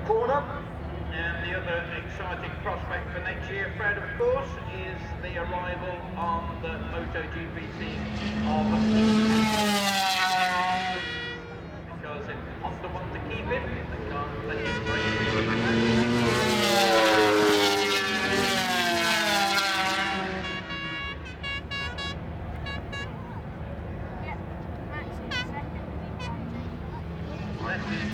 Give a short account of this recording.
500 cc motorcycle race ... part two ... Starkeys ... Donington Park ... the race and associated noise ... Sony ECM 959 one point stereo mic to Sony Minidisk ...